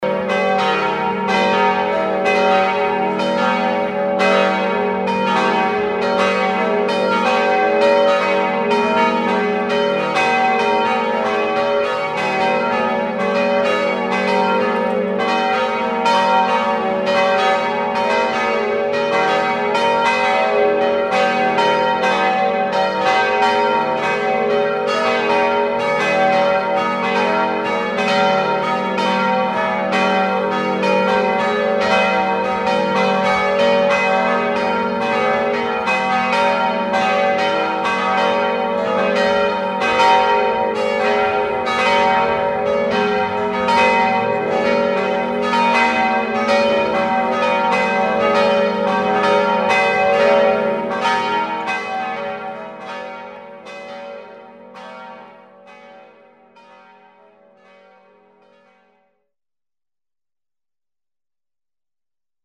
The church bells at the Mother Gods Procession day.
Clervaux, Prozessionsglocken
Die Kirchenglocken am Tag der Muttergottesprozession. Aufgenommen von Pierre Obertin im Mai 2011.
Clervaux, procession de cloches
Les cloches de l’église le jour de la procession de la Vierge. Enregistré par Pierre Obertin en mai 2011.
Project - Klangraum Our - topographic field recordings, sound objects and social ambiences